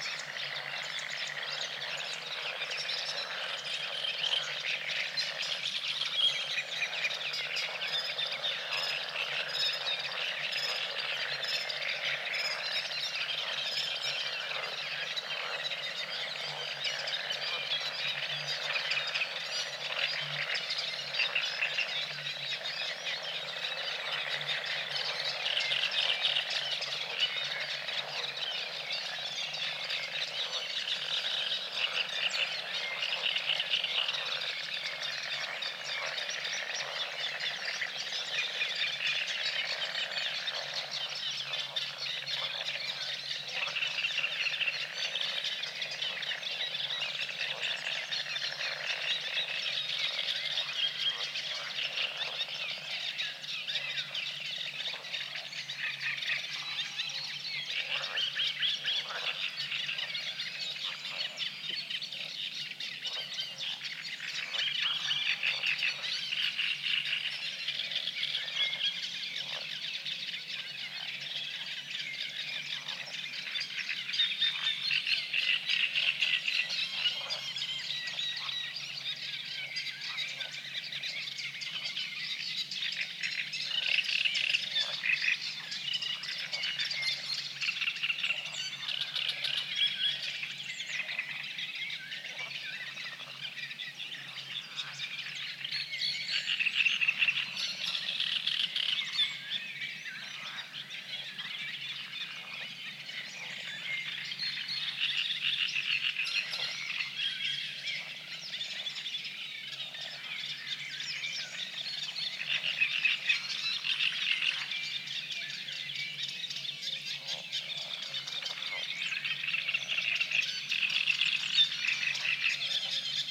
Zielonka Forest, Poland - Frog alarm - Trojanka Springs
early morning, peat-bog at Trojanka Springs; frogs get alarmed by an intruder and become really noisy; recorded with PCM-D100 and Clippy EM272 Stereo Microphones
powiat poznański, województwo wielkopolskie, Polska, 22 May 2021, 05:40